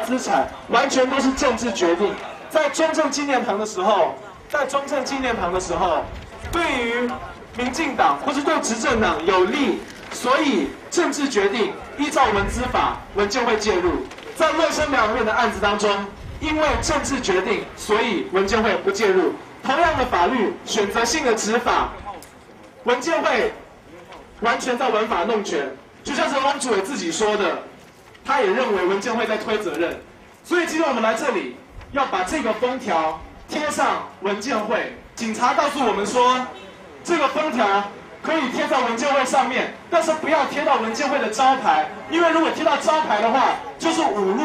Ministry of Culture, Taipei - Protest
Protests, Lo-Sheng Sanatorium, Department of Health, Sony ECM-MS907, Sony Hi-MD MZ-RH1
台北市 (Taipei City), 中華民國, 16 November 2007